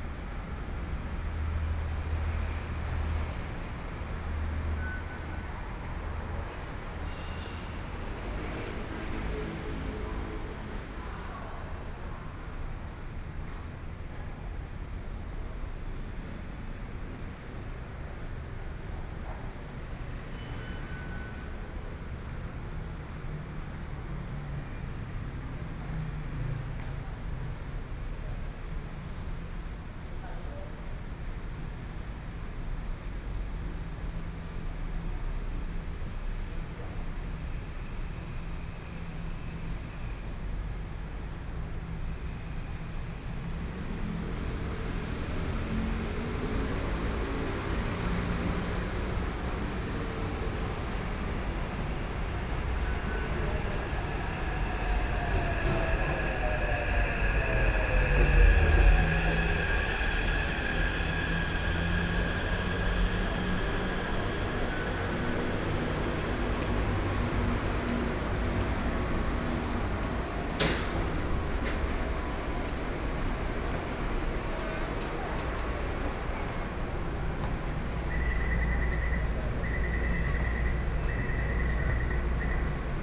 Qiyan Station, Taipei - Platform
Platform, Sony PCM D50 + Soundman OKM II
北投區, 台北市 (Taipei City), 中華民國, 4 June 2013